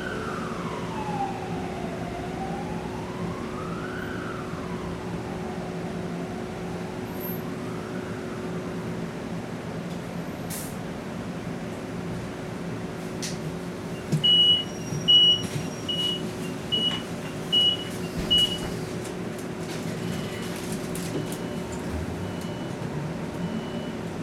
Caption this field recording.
Windows open, air vconditionning in the small electric bus. Tech Note : Olympus LS5 internal microphones.